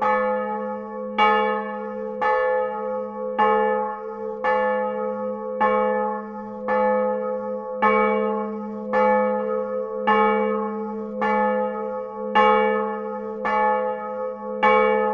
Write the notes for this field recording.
The Bonlez bell manually ringed in the tower. It's a very poor system and dirty place. This is not ringed frequently, unfortunately.